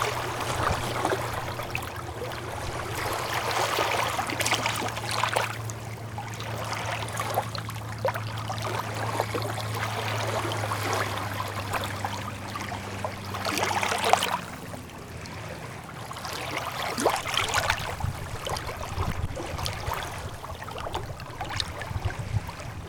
small waves at the beach in Svartönstaden area i Luleå Sweden. captured with minidisc and a small condenser microphone attached to a stick in the sand. You can hear a boat passing by far away and how the tides rises.
Luleå, Sweden, 23 August 2009